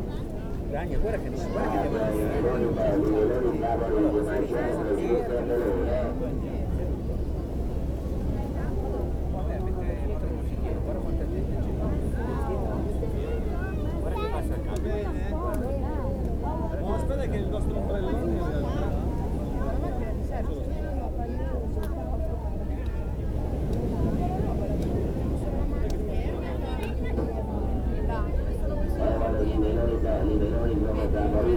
albenga, free public beach at the seaside
traffic at the seaside promenade passing the public beach area, megaphone announcement of plant and melone sellers in a lorry driving up and down the promenade
soundmap international: social ambiences/ listen to the people in & outdoor topographic field recordings